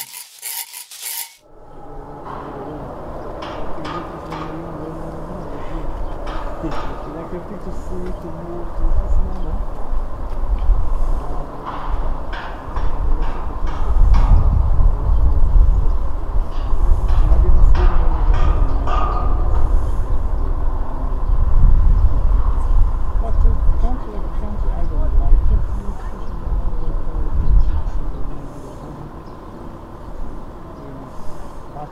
Kalemegdan, Belgrade - Priprema za koncert (concert preparation)
Belgrade, Serbia, 14 June 2011